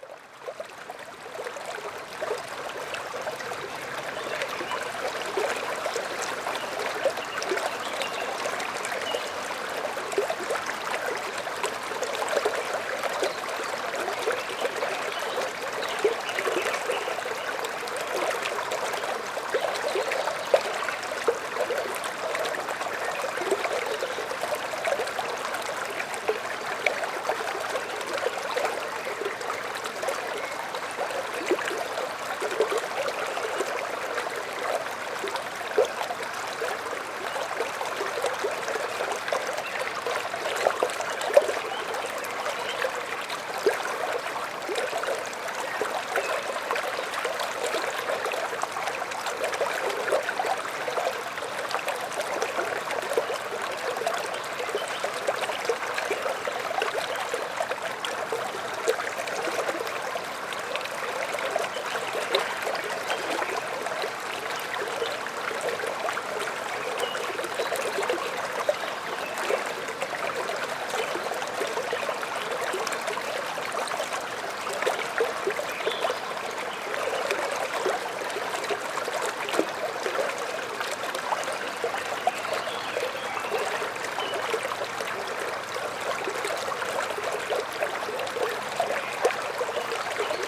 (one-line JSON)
{"title": "Sirutėnai, Lithuania, at streamlet", "date": "2022-05-29 16:45:00", "description": "standing at the small streamlet", "latitude": "55.55", "longitude": "25.63", "altitude": "148", "timezone": "Europe/Vilnius"}